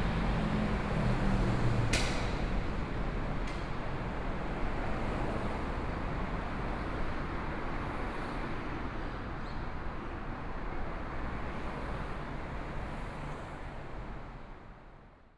大直橋, Taipei city - Traffic Sound
Traffic Sound
Binaural recordings, ( Proposal to turn up the volume )
Zoom H4n+ Soundman OKM II